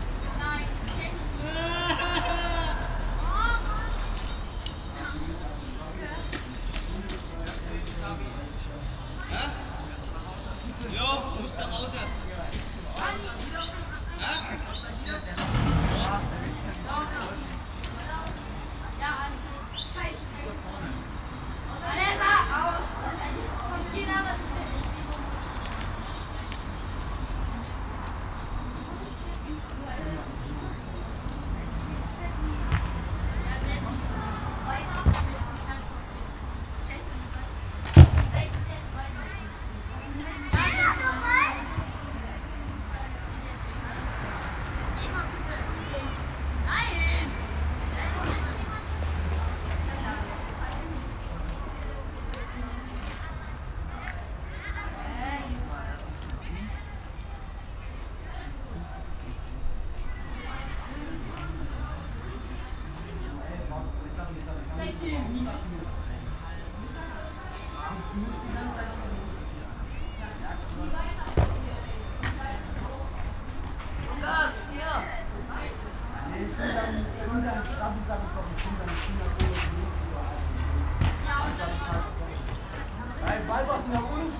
jugendliche beim spielen im henriettenpark, dazu fahrradfahrer & passanten.
Leipzig, Deutschland, 31 August, 18:30